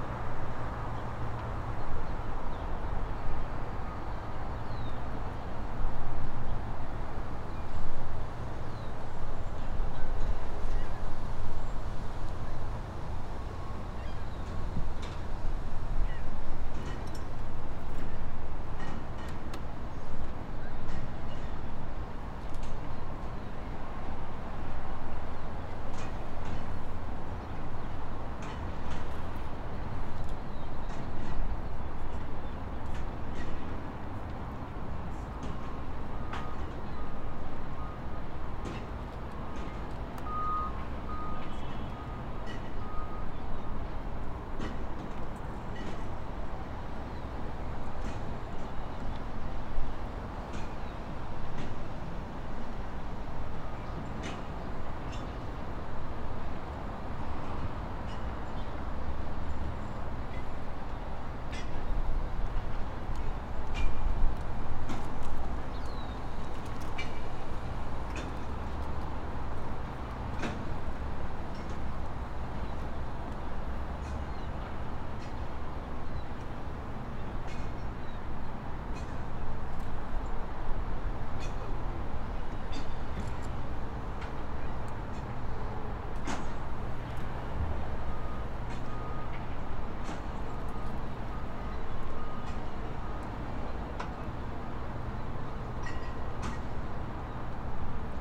Recorded above water on Tender 1 with SAIC Eco Design Chicago River Works class taught by Linda Keane and Eric Leonardson